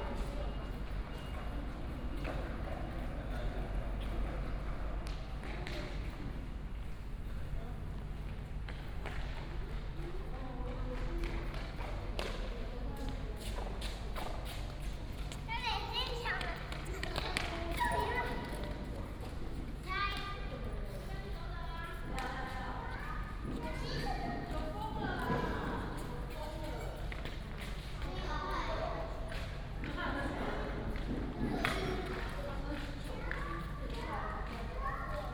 中興文創園區, Wujie Township, Yilan County - Child and skateboard
Transformation of the old paper mill, Child, skateboard, Traffic sound, Binaural recordings, Sony PCM D100+ Soundman OKM II
Yilan County, Taiwan, 6 November 2017